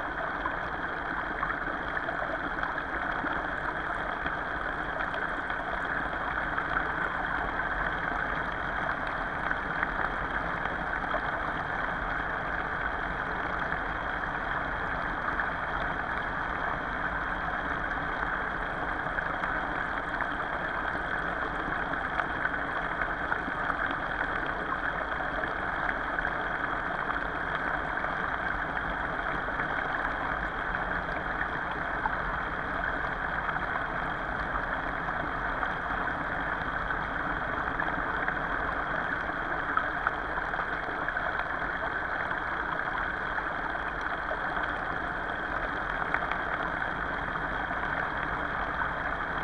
Utena, Lithuania, underwater pipe
hidrophones recording of the underwater pipe found in the little river
May 2015